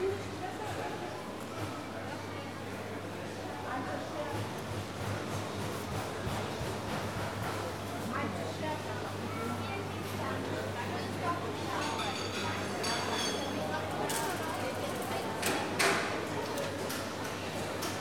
Berlin, Kreuzberg, Bergmannstraße - Marheineke-Halle
walking along various shops, stalls and imbisses at the Marheineke-Halle. distinct hum of ventilation units fills the main area of the hall. clutter of plates, shop assistants offering goods, warping purchased items, encouraging customers to take a look at their products.